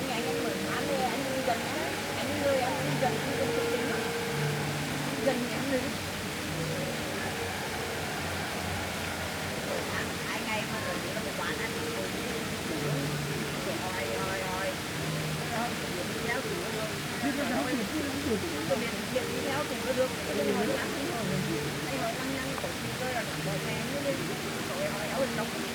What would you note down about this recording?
During all the building work of 2021 the 'Friendship of the Peoples' fountain remains Alexanderplatz's focal point. Tourists from all nations still group here, sitting around the edge, chatting, looking at maps, checking phones, deciding what next. The fountain water fizzes. Several musicians play. Rock ballads, classical music, Arabic drumming. It is a warm day and the reverberant acoustics are soupy, made less clear by hums, whines and bangs from the building site. An older man, slightly drunk, very briefly strokes the fake fur of my microphone wind shield, and walks on. Friendship?!